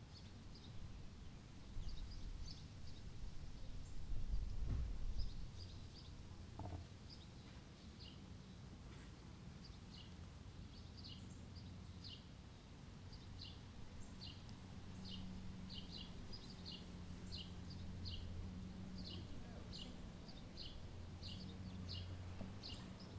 Casterton Ave. Highland Square, Akron, OH, USA - Casterton Ave
Recording on Casterton Ave in Highland Square, Akron OH using Zoom Q3HD Handy Video recorder on a Flip mini tripod set on the ground in front of a residential home.